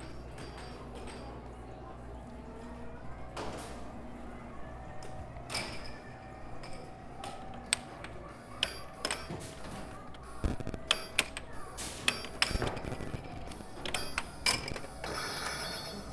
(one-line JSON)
{"title": "Rua Domingos Agostim - Cidade Mãe do Céu, São Paulo - SP, 03306-010, Brasil - AMBIÊNCIA PLAYLAND SHOPPING METRÔ TATUAPÉ", "date": "2019-04-11 17:30:00", "description": "AMBIÊNCIA NO PARQUE DE DIVERSÕES PLAYLAND NO SHOPPING METRÔ TATUAPÉ, COM GRAVADOR TASCAM DR40, REALIZADO NUM AMBIENTE FECHADO, COM NÚMERO REDUZIDO DE PESSOAS, EM MOVIMENTO E COM SONS DE BRINQUEDOS E JOGOS ELETRÔNICOS .", "latitude": "-23.54", "longitude": "-46.58", "altitude": "759", "timezone": "America/Sao_Paulo"}